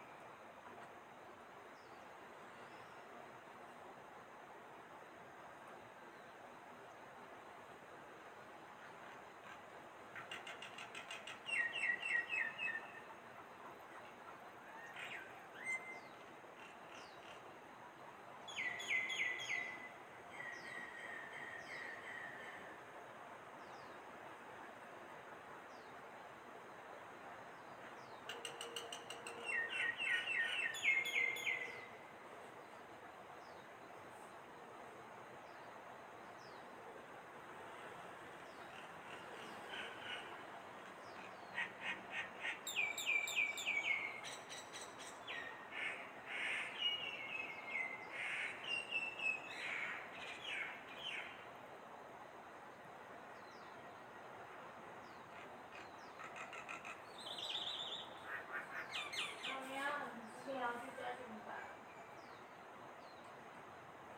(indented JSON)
{
  "title": "224台灣新北市瑞芳區大埔路錢龍新城 - Taiwan Whistling Thrush",
  "date": "2022-09-29 05:30:00",
  "description": "Place:\nRuifang, a place surrounded by natural environment.\nRecording:\nTaiwan Whistling Thrush's sound mainly.\nSituation:\nEarly at morning, before sunrise.\nTechniques:\nRealme narzo 50A",
  "latitude": "25.10",
  "longitude": "121.77",
  "altitude": "45",
  "timezone": "Asia/Taipei"
}